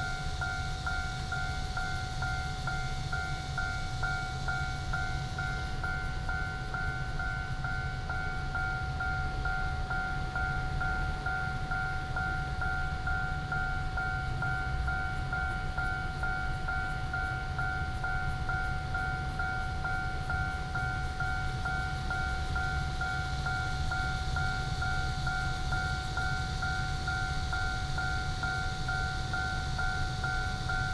Narrow alley, Cicada cry, Traffic sound, The train runs through, Railroad Crossing
Taoyuan City, Taiwan, 28 July 2017